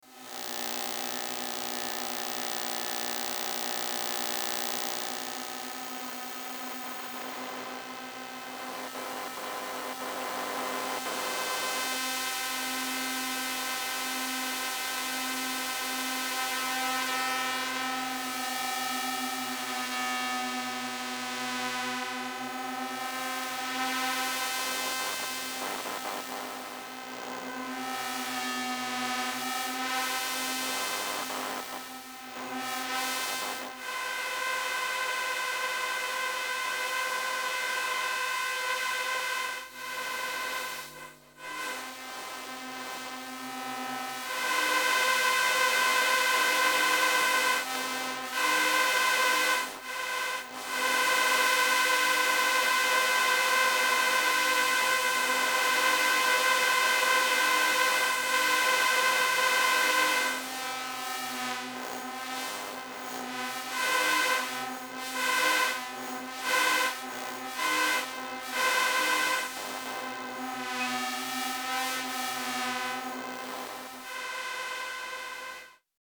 micro Elektrosluch 3+
Festival Bien urbain
Jérome Fino & Somaticae
Besançon, France